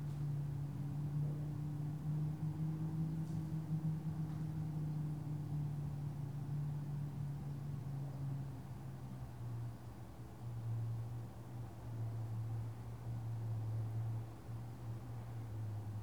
Poznan, Piatkowo district, ul. Mateckiege, hallway - air vent
recorded through an air vent. wailing wind, sparse sounds or the outside world. cracks come from expanding plastic bottles that were flattened a few minutes earlier.
Poznan, Poland, 6 September